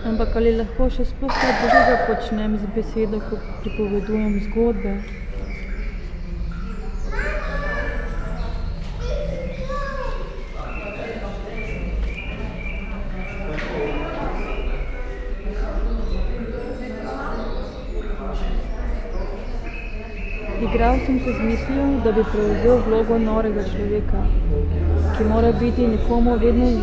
Secret listening to Eurydice, Celje, Slovenia - Public reading 7 in Likovni salon Celje
time fragment from 30m10s till 32m32s of one hour performance Secret listening to Eurydice 7 and Public reading, on the occasion of exhibition opening of artist Andreja Džakušič